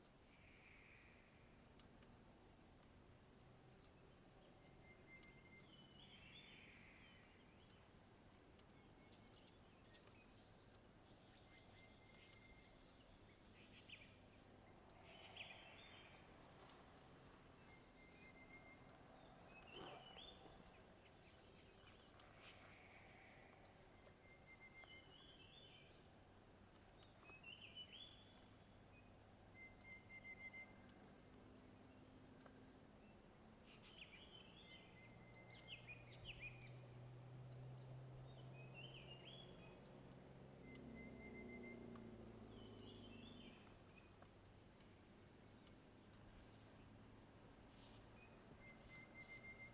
奇美村, Rueisuei Township - Birds singing
Traffic Sound, Birds singing
Zoom H2n MS+XY
Hualien County, Taiwan, 9 October, ~14:00